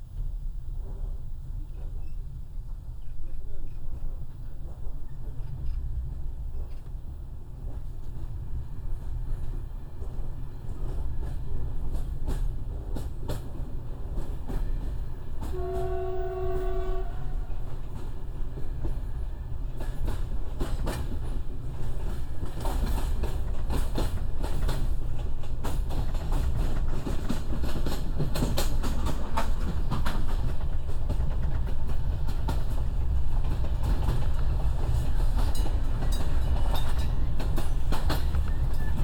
Turkey, Frontier to Greece - Train from Istanbul to Thessaloniki passing the Evros river bridge